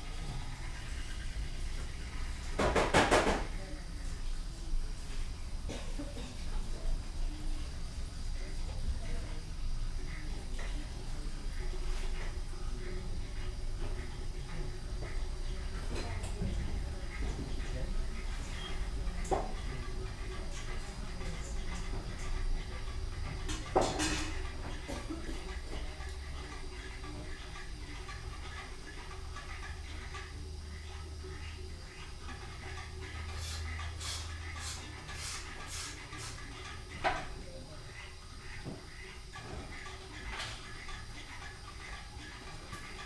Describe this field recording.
ANCORA, cultural space and gathering point for the Tsonami festival, people preparing food, ambience, (Son PCM D50, DPA4060)